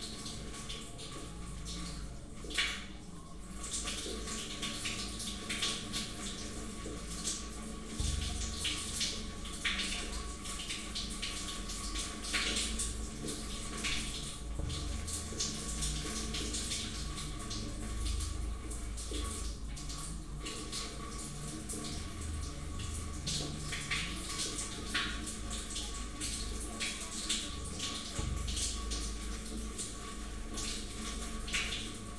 small mics placed in the drainage well on the street